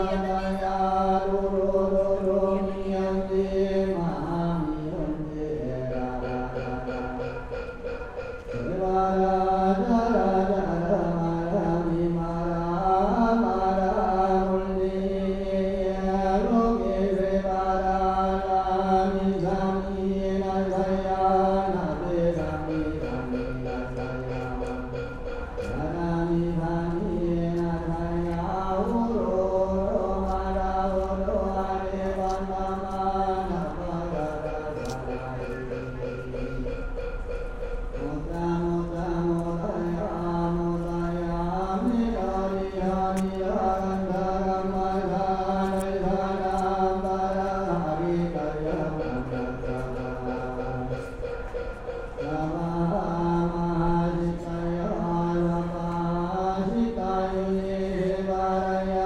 Seoul - Great Hero Hall, Seoul
Outside The Great Hero Hall, Seoul